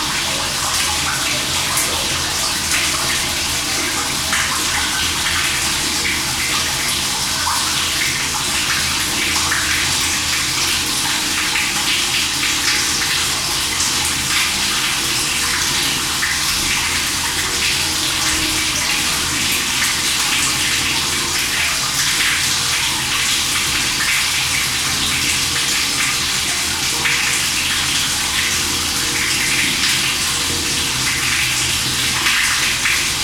Tulse Hill, UK - Thames Water Manhole
Recorded with a pair of DPA 4060s and a Marantz PMD661